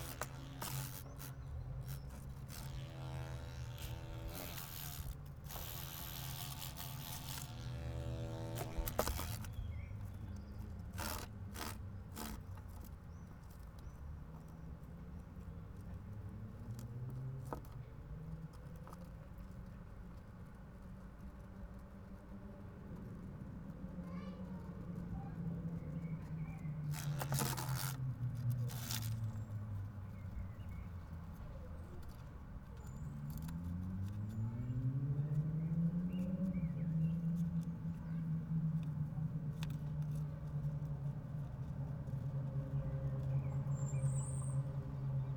Poznan, balcony - humble-bee in a bucket
a stray, tired humble-bee trying to get out of a plastic bucket
Poznan, Poland, 4 July 2013, ~22:00